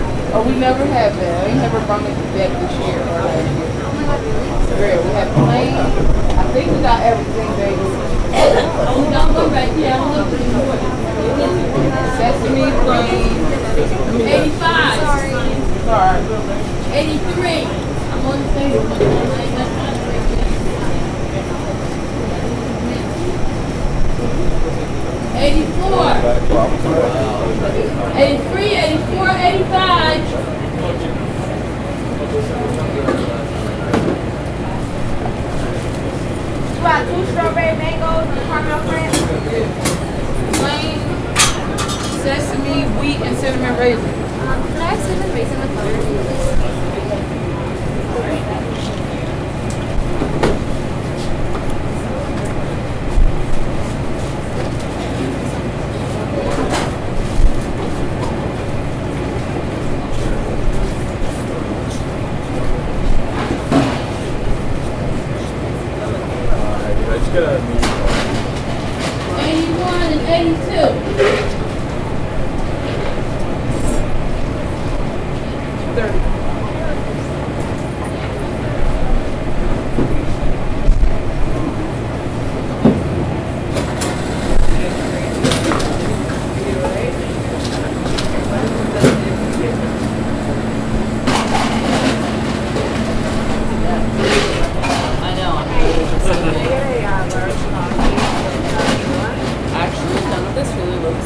Library, The College of New Jersey, Pennington Road, Ewing Township, NJ, USA - Starbucks
Capturing the chaos of Starbucks by placing the recorder near the ordering station.